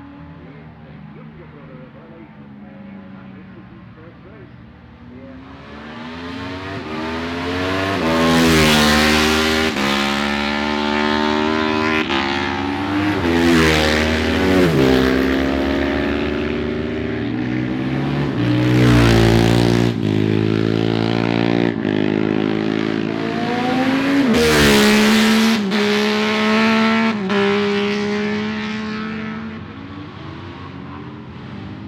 Barry Sheene Classic Races ... one point stereo mic to minidisk ... some classic bikes including two Patons and an MV Agusta ...